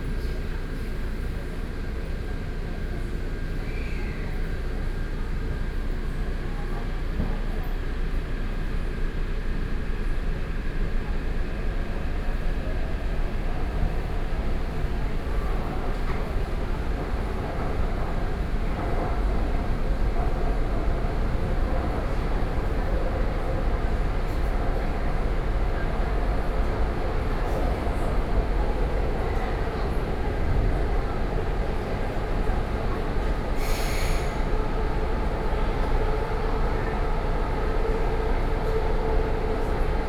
Xindian Line (Taipei Metro), Taipei City - Xindian Line
from Taipower Building to Taipei Main Station, Sony PCM D50 + Soundman OKM II
Taipei City, Taiwan